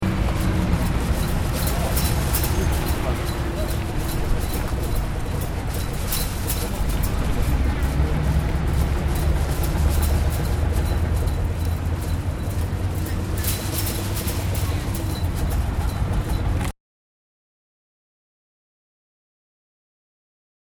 Albert Park
This is the sound of a metal fence clanging against its metal frame because of the wind .........
Auckland, New Zealand